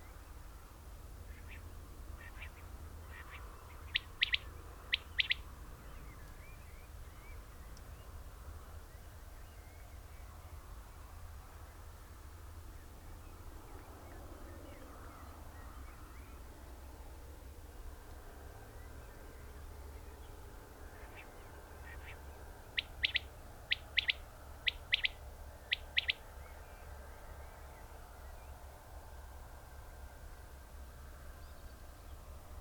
Luttons, UK - Quails calling at dusk ...
Quails calling ... song ..? binaural dummy head on tripod to minidisk ... bird calls from corn bunting ... skylark ... blackbird ... red-legged partridge ... grey partridge ... fireworks and music at 30:00 ... ish ... the bird calls on ... background noise ...
Malton, UK, 12 June 2010